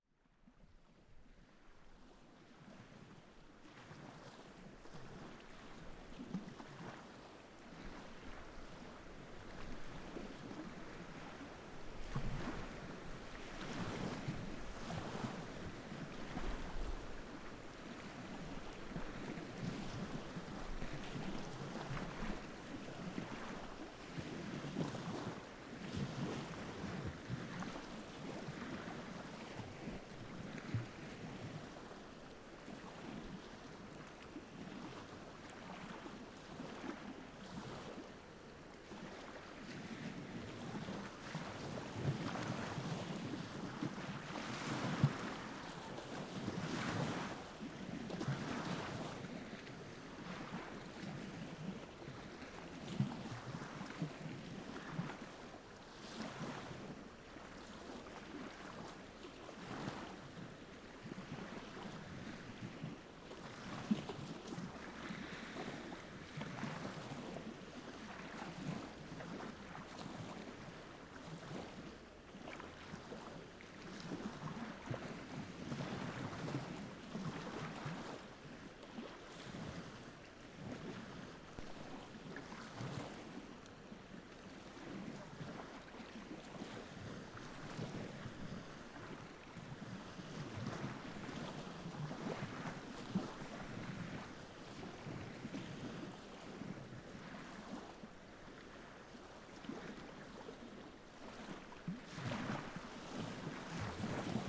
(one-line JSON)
{"title": "lake Kertuoja, Lithuania, wind", "date": "2017-08-10 13:30:00", "description": "the lake itself just before the storm", "latitude": "55.19", "longitude": "25.63", "altitude": "157", "timezone": "Europe/Vilnius"}